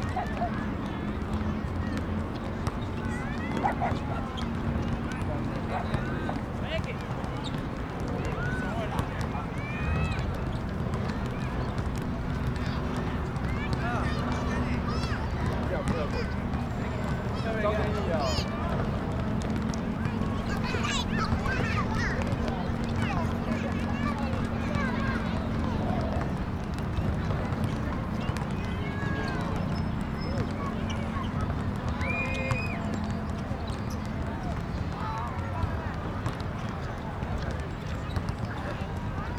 New Taipei City, Taiwan - Evening in the park
Dog, kids, basketball, Traffic Noise, Rode NT4+Zoom H4n